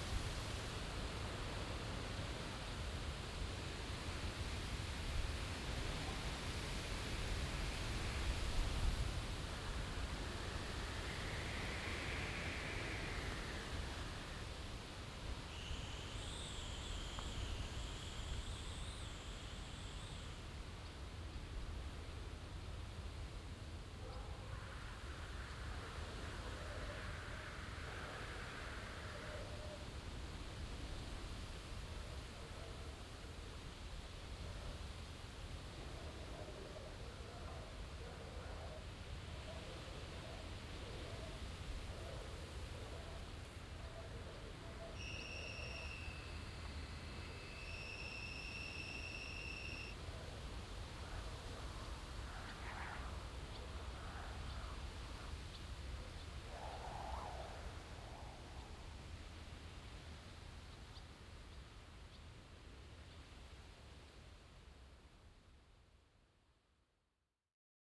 Tandel, Luxemburg - Longsdorf, wind coming up the hill
An einem windigen Sommertag. Der Klang des Windes der den Berg hinaufweht und das Rascheln der Blätter der Bäume und Büsche.
At a windy summer day. The sound of wind coming up the hill and the rattling of leaves from the bushes and trees
7 August 2012, ~13:00